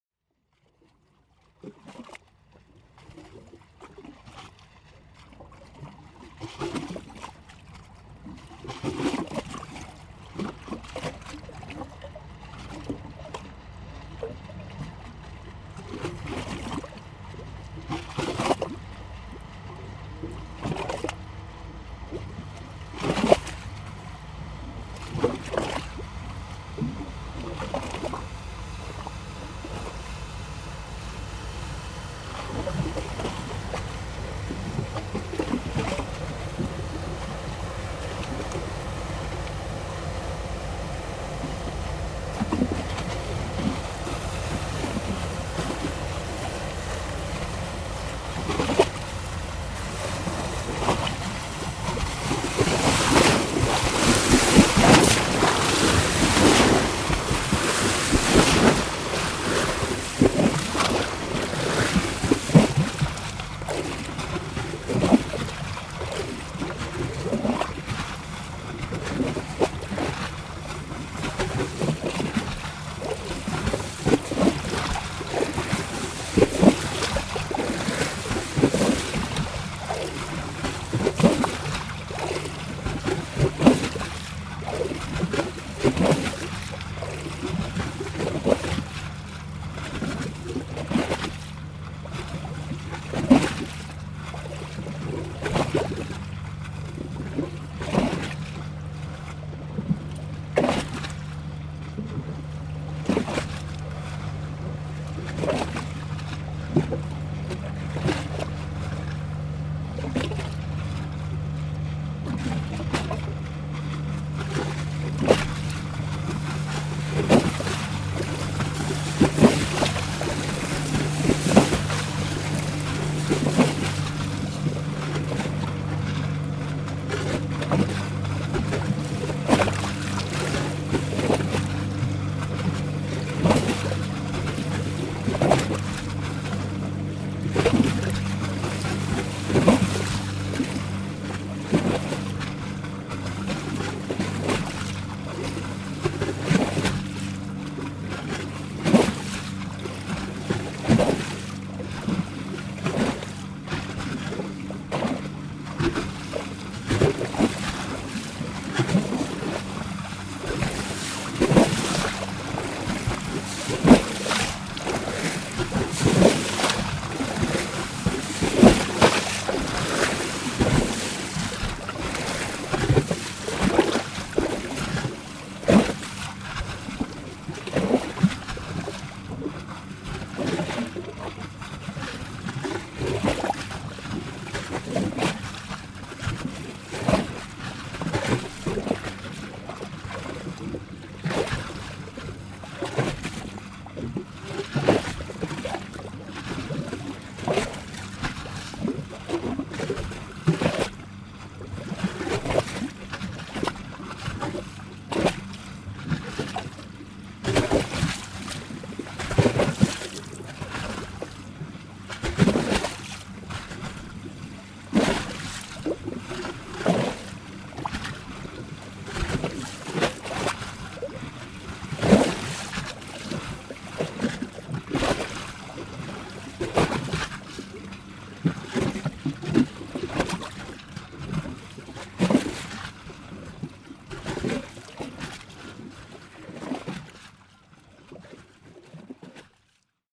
Riemst, Belgium - Two boats on the Albertkanaal
Two boats are going through the canal, called 'Albertkanaal' in dutch, 'canal Albert' in french. Because of the very near linguistic border, a lot of things are bilingual here, so the canal has two names. Because of the strong wind, there's a lot of waves. My pullover remembers it !
17 December 2017